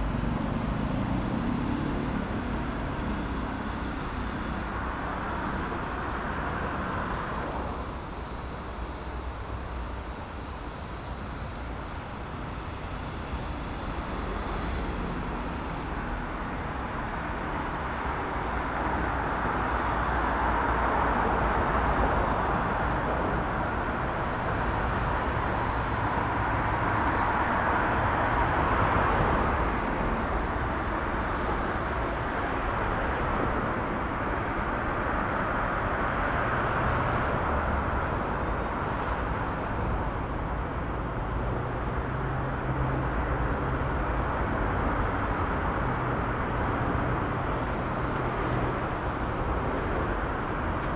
berlin: bundesallee - the city, the country & me: above the tunnel entrance
the city, the country & me: november 4, 2011
Berlin, Germany, 4 November 2011